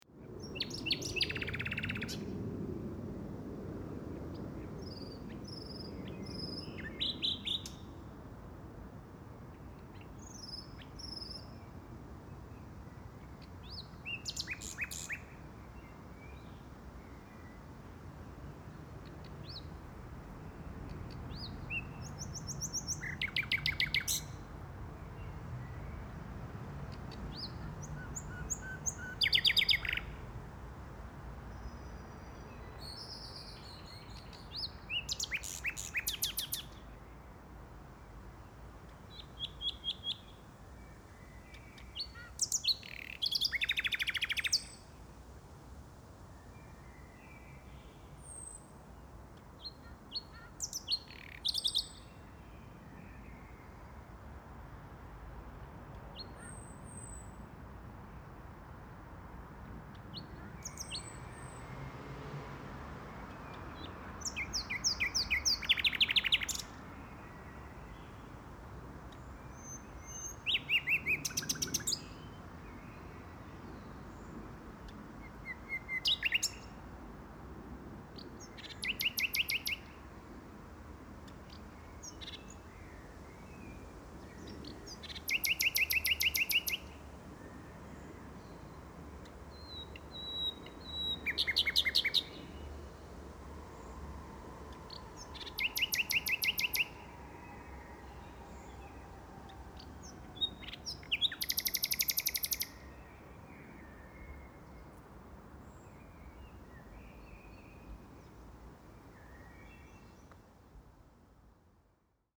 Kirchhof der Franz.Reformierten Gemeinde, Berlin, Germany - Nightingale beside the graves of Bertold Brecht and Helene Weigel
In late April and May Berlin's nightingales are a joy to hear. This one is singing close to where playwright Bertold Brecht and his wife, actress Helene Weigel, lie buried side by side.
Portsaid, Germany, 30 April 2012